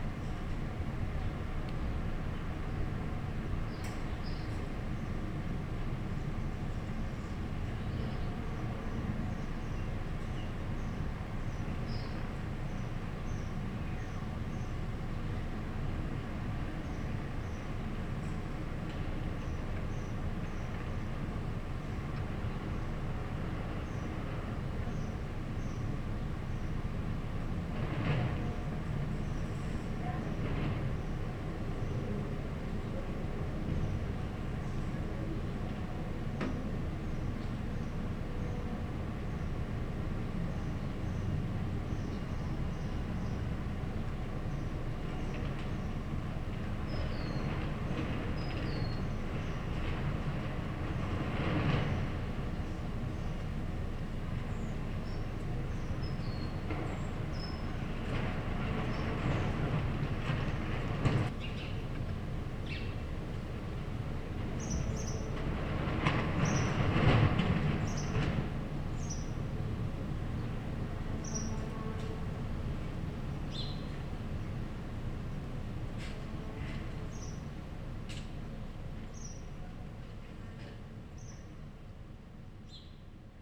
hinterhof, eine baustelle, wind, in der ferne eine demo
a courtyard, a construction site, wind, a union's demonstration in the far
Berlin, Germany, 25 April 2015, 16:12